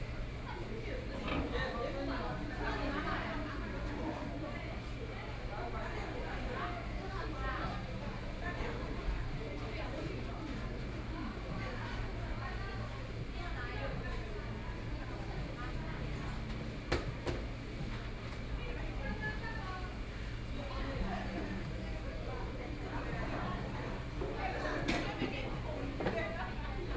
{
  "title": "Yangpu District - In the fast-food restaurant",
  "date": "2013-11-26 11:52:00",
  "description": "In the fast-food restaurant（KFC）, Binaural recording, Zoom H6+ Soundman OKM II",
  "latitude": "31.28",
  "longitude": "121.52",
  "altitude": "11",
  "timezone": "Asia/Shanghai"
}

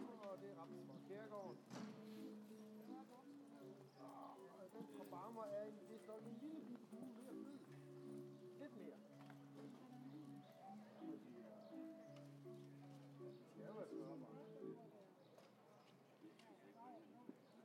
{
  "title": "Randers C, Randers, Danmark - Marketday",
  "date": "2015-03-14 12:10:00",
  "description": "From a weekly market day in the center of Randers. People is buying Honey, flowers and vegestables and cheese.",
  "latitude": "56.46",
  "longitude": "10.04",
  "altitude": "11",
  "timezone": "Europe/Copenhagen"
}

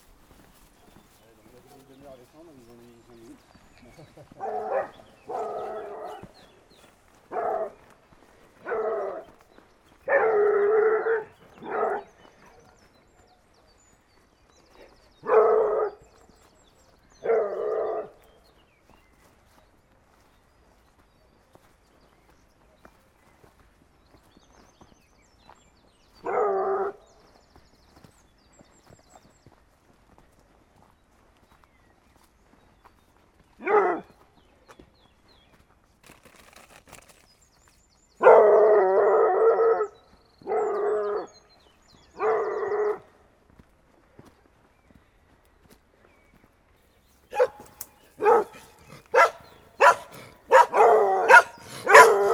{
  "title": "Saint-Martin-le-Vinoux, France - Dogs barking",
  "date": "2017-03-31 08:00:00",
  "description": "In the very small Lachal village, two dogs are barking especially hardly. It's \"Luciole\" and \"Chloé\", two Ariegeois dogs. For sure, the others small dogs are following ! You can hear these two dogs from La Bastille, a touristical fortress just near Grenoble. In facts, these dogs are very famous !",
  "latitude": "45.22",
  "longitude": "5.72",
  "altitude": "570",
  "timezone": "Europe/Paris"
}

